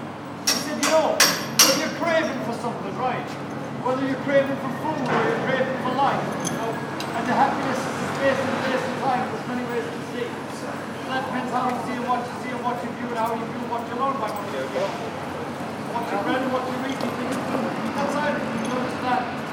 China Town
Gearge Street, men working, cars, people